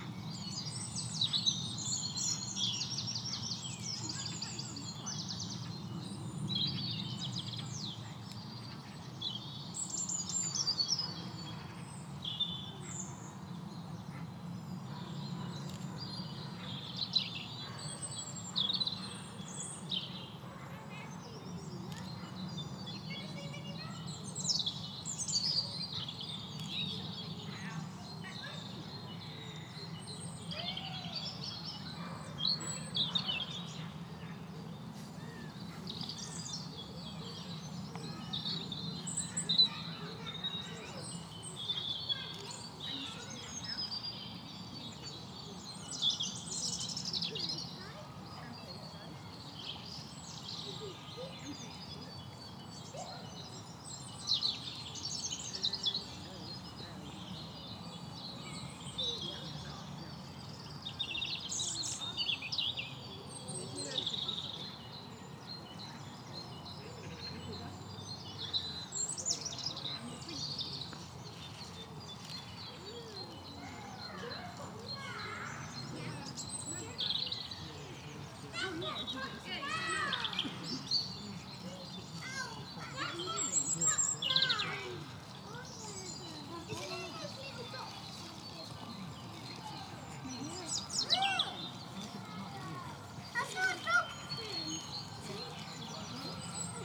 Mile End, Colchester, Essex, UK - Highwoods Country Park
People on a walk, birds, trucks.